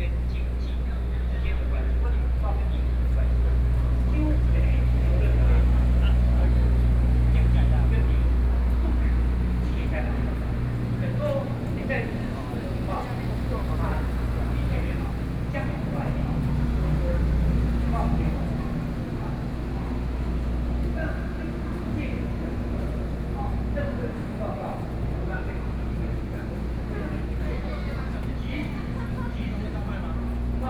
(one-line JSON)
{"title": "中正區幸福里, Taipei City - Sit still", "date": "2014-03-29 13:25:00", "description": "Student activism, Walking through the site in protest, People and students occupied the Legislative Yuan", "latitude": "25.04", "longitude": "121.52", "altitude": "9", "timezone": "Asia/Taipei"}